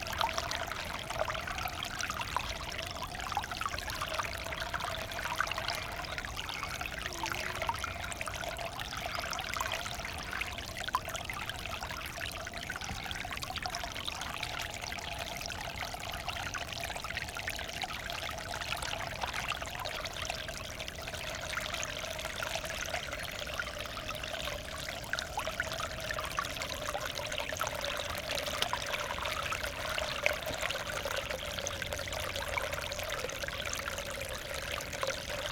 Beselich Niedertiefenbach, Ton - source of a little creek
outflow of a forest pond, source of a little creek (and some memories...) place revisited.
(Sony PCM D50)
Germany, 28 March 2016, 18:00